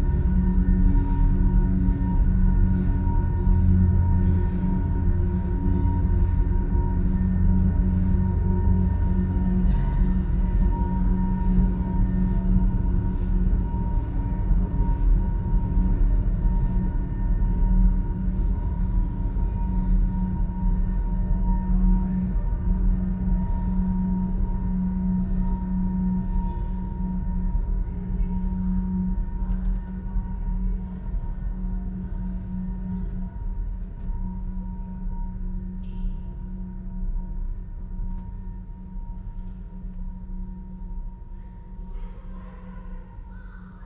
völklingen, völklinger hütte, gebläsehalle
gebläsehalle des weltkulturerbes, hier mit klängen einer permanenten installation von stephan mathieu
soundmap d: social ambiences/ listen to the people - in & outdoor nearfield recordings